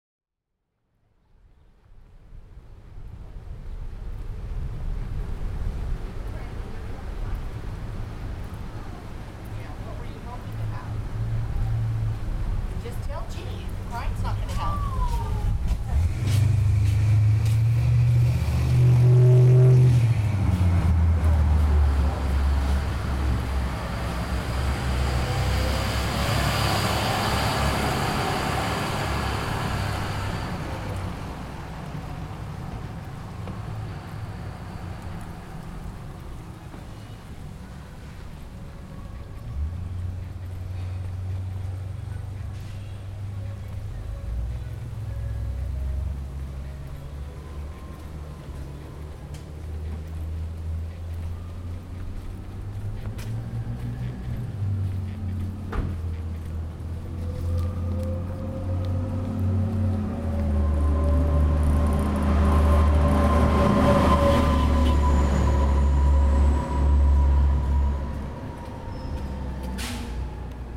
Seacoast Ave., Imperial Beach, CA, USA - Walking Through Katy's Cafe
Sunday morning walk north on Seacoast Drive, Imperial Beach, California. Ocean noises and people at outdoor tables, trucks and traffic noise, someone singing. Entering Katie's Cafe with people ordering breakfast, walking out onto patio, ocean noises, "Here's my husband."